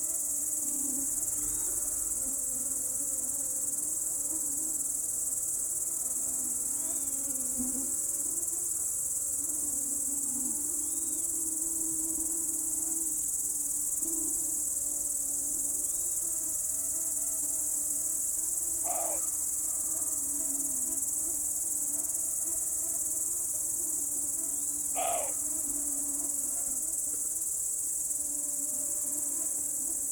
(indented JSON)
{
  "title": "mosquitoes, crickets and deer, South Estonia",
  "date": "2010-07-12 23:23:00",
  "description": "mosquitoes attacking my windscreens while recording evening insect sounds, then a deer crosses in front and starts barking",
  "latitude": "58.21",
  "longitude": "27.18",
  "altitude": "39",
  "timezone": "Europe/Tallinn"
}